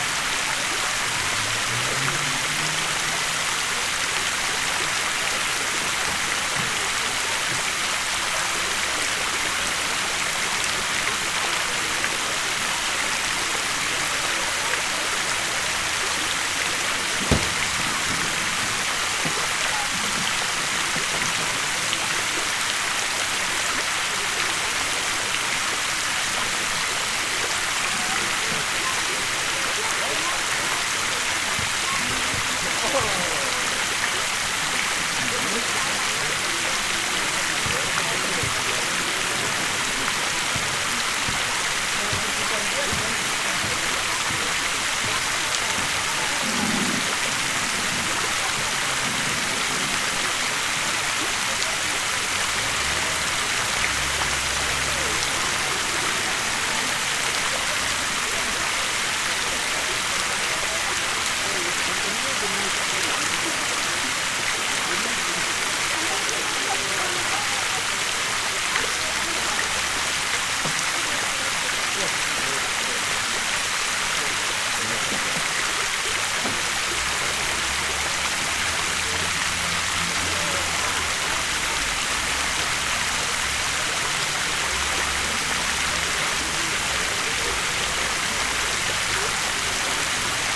Vokiečių g., Vilnius, Lithuania - A Fountain
A fountain in the middle of Vokiečių street, Vilnius. Through the constant noise of the fountain, chatter of a group of people and other noises can be heard nearby. Recorded with ZOOM H5.